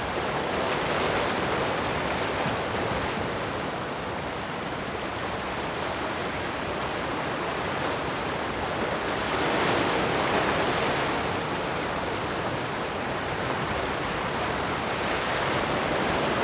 野銀港澳, Jivalino - Waves and tides
Sound of the waves, Waves and tides
Zoom H6 +Rode NT4
29 October, ~14:00